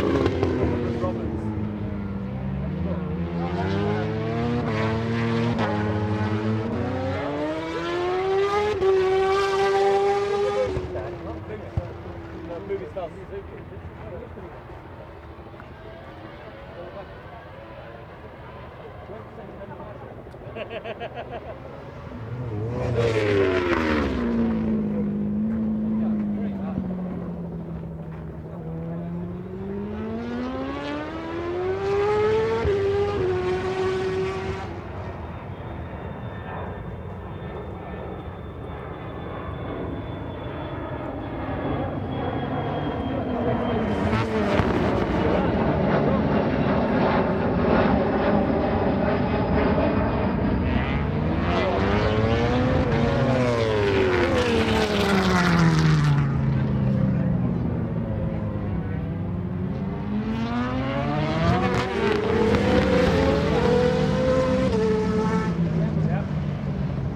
Free practice ... part two ... Melbourne Loop ... mixture 990cc four strokes an d500cc two strokes ...